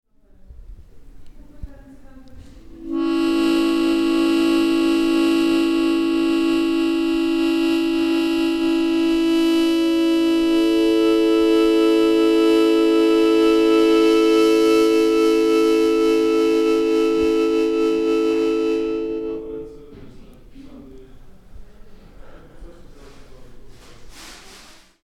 bonifazius, bürknerstr. - Mundharmonika
Mundharmonika / mouth organ